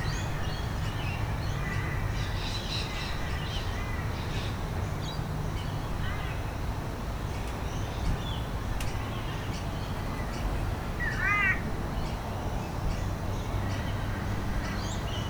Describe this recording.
This was recorded in my garden in the morning in March. It was a warm, slightly overcast & windy morning. Birds, kids walking to school, traffic, wind chimes and a moth flying passed the microphone at 1:42 and 1:48. Recorded on a Zoom H4N.